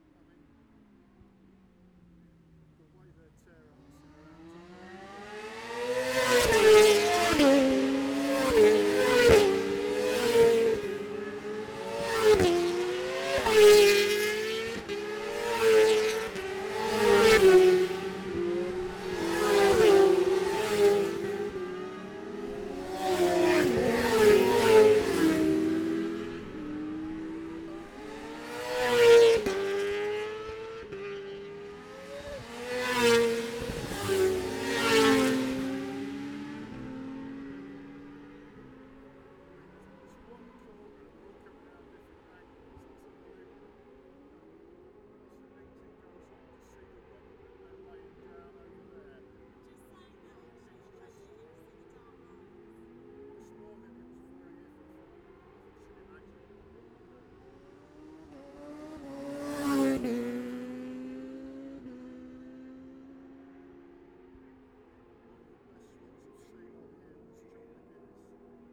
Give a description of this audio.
Gold Cup 2020 ... 600 evens qualifying ... dpas bag MixPre3 ... Memorial out ... red-flagged ...